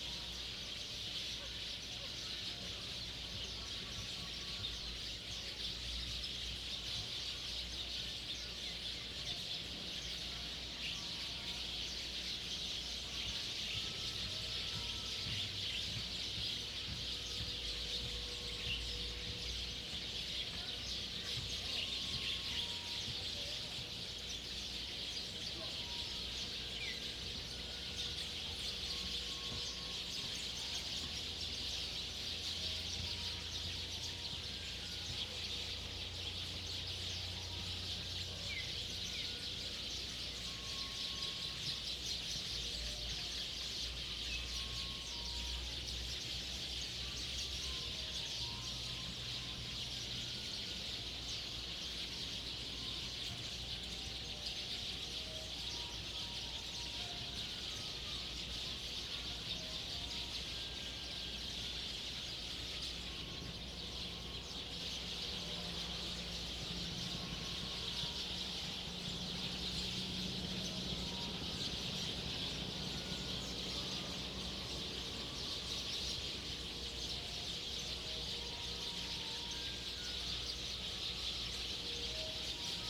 金崙村, Taimali Township - Birdsong
Birdsong, In the street, Small village, Traffic Sound
Zoom H2n MS +XY